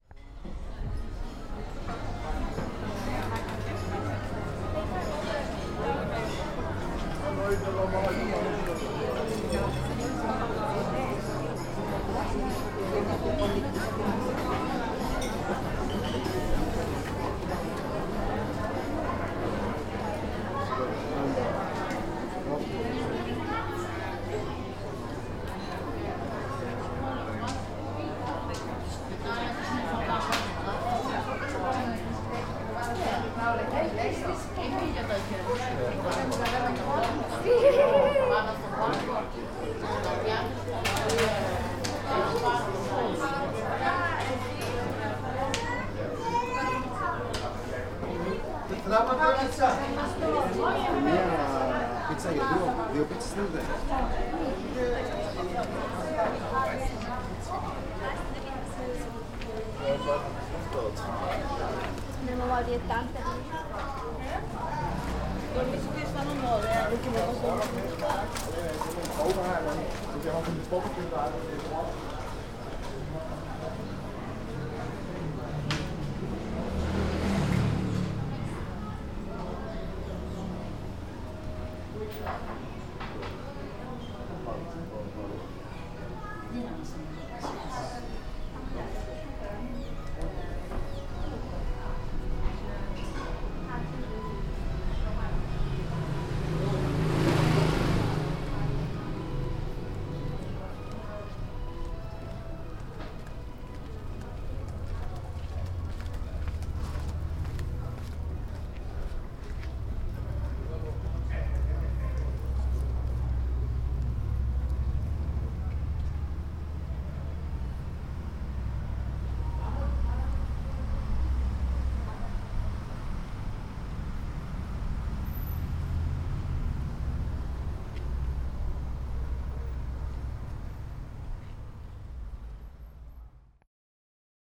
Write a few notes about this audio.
walking down the small streets